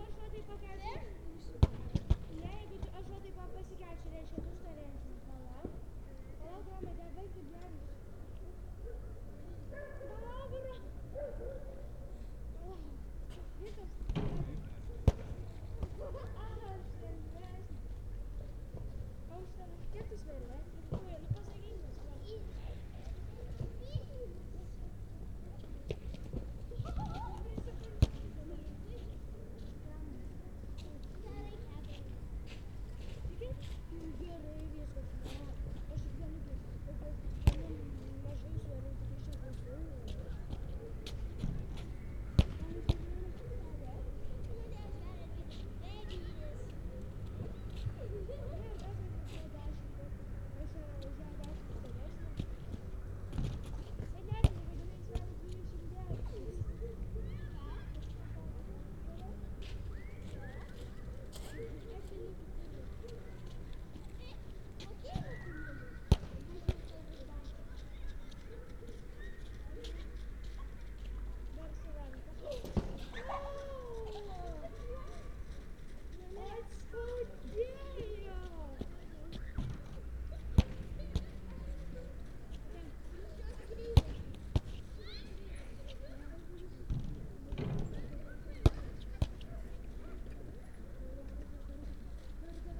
Molėtai, Lithuania, at the lake
a soundscape at the frozen lake